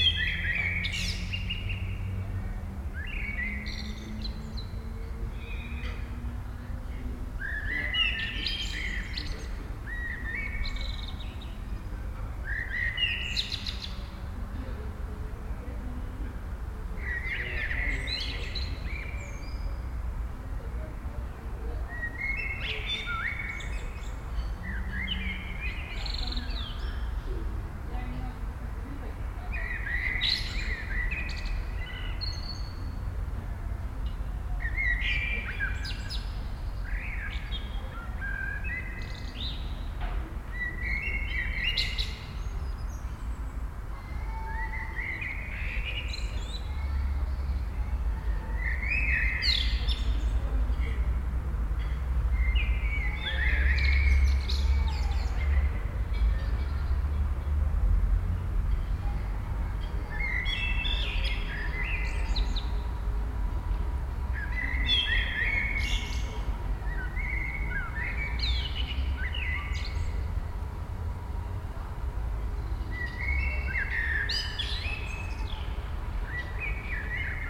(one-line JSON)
{"title": "Wik, Kiel, Deutschland - Evening in the courtyard", "date": "2017-05-18 19:48:00", "description": "Evening atmosphere in a courtyard of an urban residential district. Many blackbirds, some people talking and having their evening meal on their balcony. In a distance some children at play. Omnipresent traffic hum.\nBinaural recording, Soundman OKM II Klassik microphone with A3-XLR adapter and windshield, Zoom F4 recorder.", "latitude": "54.35", "longitude": "10.10", "altitude": "24", "timezone": "Europe/Berlin"}